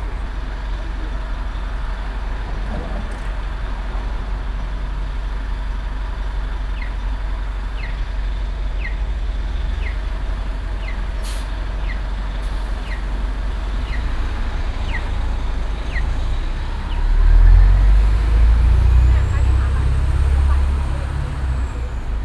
vancouver - e hastings - main street - traffic light signs
sounding traffic lights at busy street downtown in the early afternoon
soundmap international
social ambiences/ listen to the people - in & outdoor nearfield recordings